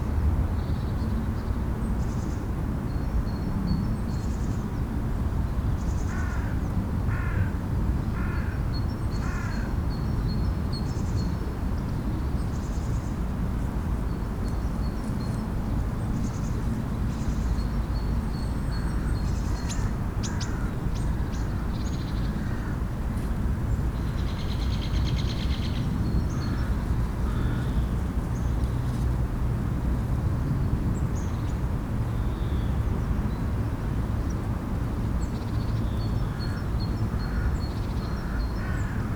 the city, the country & me: february 3, 2013
Deutschland, European Union